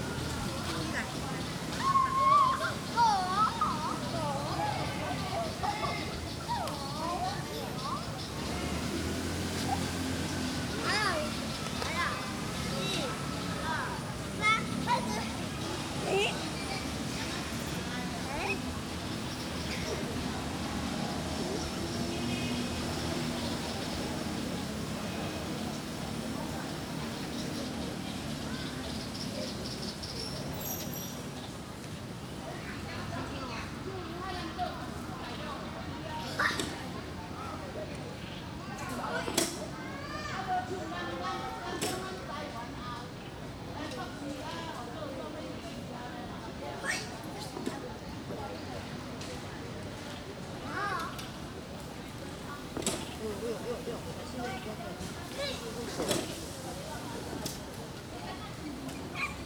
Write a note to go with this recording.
in the Park, Child, Aircraft flying through, Sony Hi-MD MZ-RH1 +Sony ECM-MS907